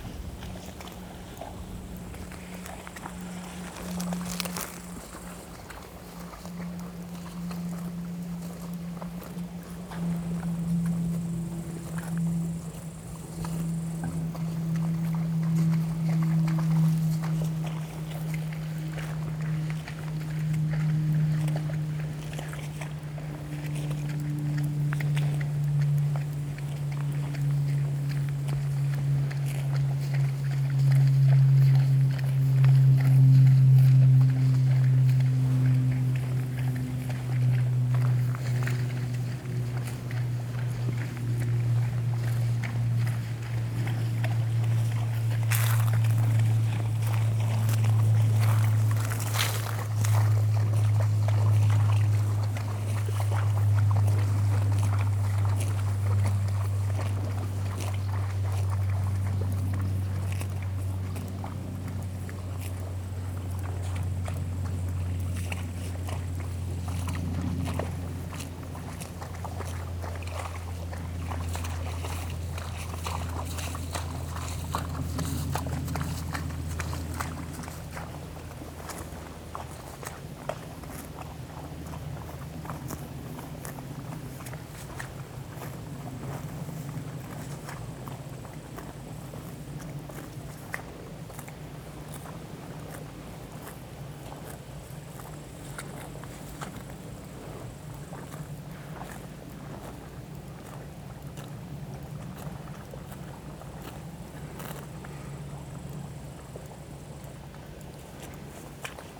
Cows walking eating in waterlogged field, Lübbenau, Germany - Cows walking and eating in a waterlogged field, a plane almost resonates.
A herd of light brown cows in a wet waterlogged field, squelching as they walked and ate. Two walkers were filming, murmuring occasionally. It is windy in the trees behinf me. A very small plane drones above, almost resonating in the landscape.
Brandenburg, Deutschland